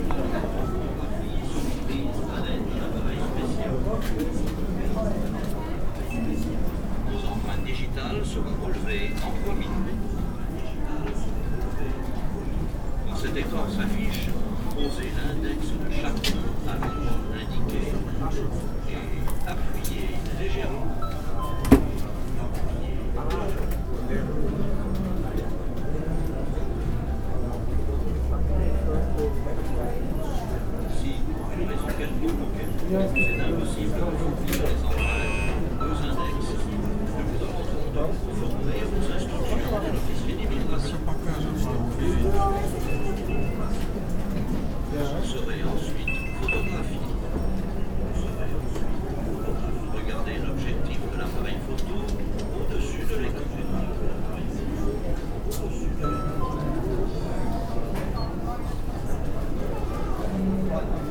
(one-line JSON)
{"title": "tokyo, airport, passport control", "date": "2010-07-27 02:50:00", "description": "at the passport control gate after arriving at the airport - fingerprint computer and some background sounds\ninternational city maps - topographic field recordings and social ambiences", "latitude": "35.55", "longitude": "139.79", "altitude": "4", "timezone": "Asia/Tokyo"}